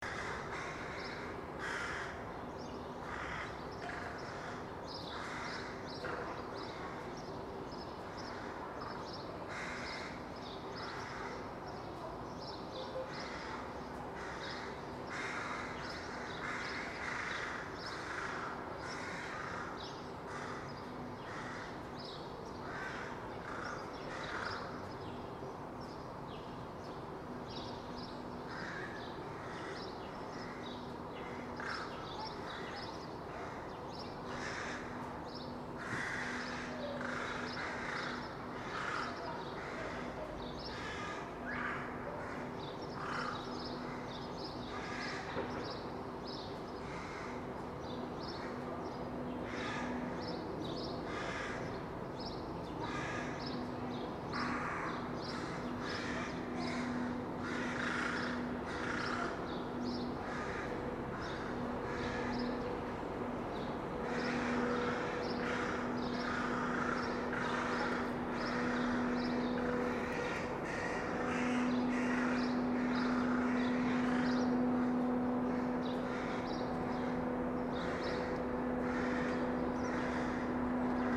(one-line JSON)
{"title": "Ленинский пр-т., Москва, Россия - Courtyard of an apartment building", "date": "2020-06-26 16:14:00", "description": "Courtyard of an apartment building. Summer day. Sunny. You can hear birds chirping and crows cawing loudly. Then the sweeper makes a noise.", "latitude": "55.71", "longitude": "37.59", "altitude": "153", "timezone": "Europe/Moscow"}